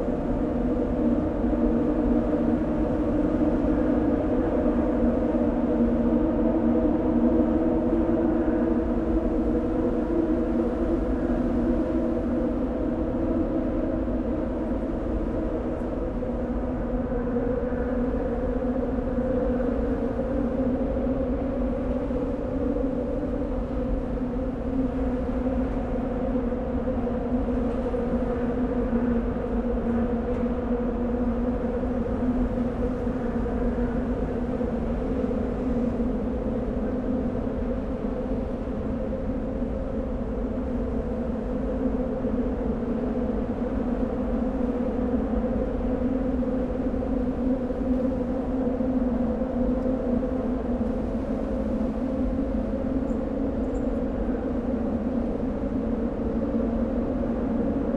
Lisbon, Ponte 25 de Abril - river Tejo waves, soundscape under bridge
place revisited on a warm October afternoon (Sony PCM D50, DPA4060)
Lisbon, Portugal